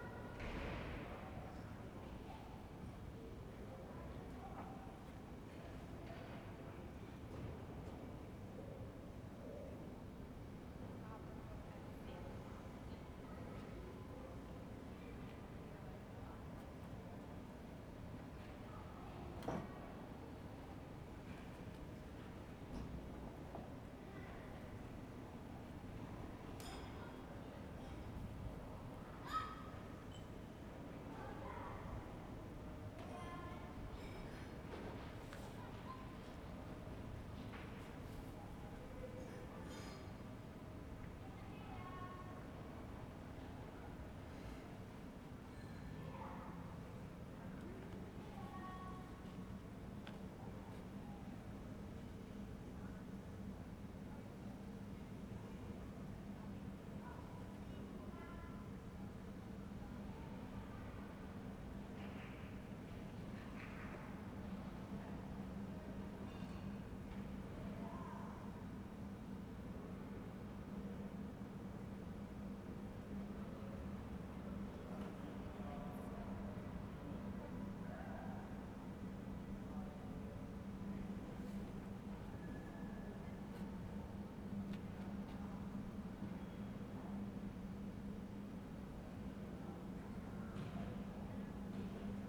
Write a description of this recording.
"Round noon with sun and dog in the time of COVID19" Soundscape, Chapter XXV of Ascolto il tuo cuore, città, Saturday March 22th 2020. Fixed position on an internal terrace at San Salvario district Turin, eighteen days after emergency disposition due to the epidemic of COVID19. Start at 11:41 a.m. end at 00:43 a.m. duration of recording 1h'01’30”.